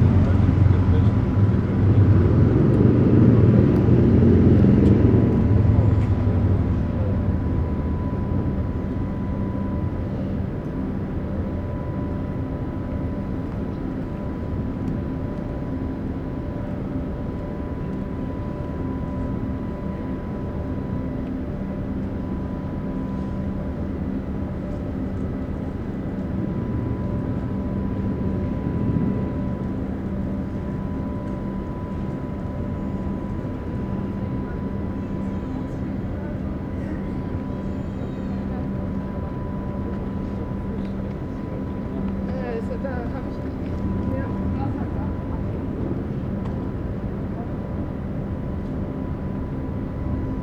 noise of boat lift
the city, the country & me: september 5, 2010
Deutschland, 5 September 2010